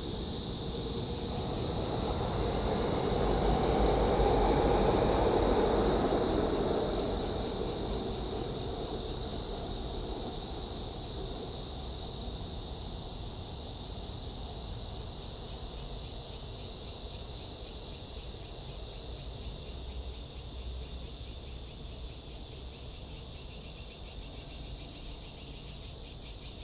Dayuan Dist., Taoyuan City - Next to the MRT
Near the airport, traffic sound, Cicada cry, MRT train passes, The plane took off
Zoom H2n MS+XY
Taoyuan City, Taiwan, 26 July 2017, 11:20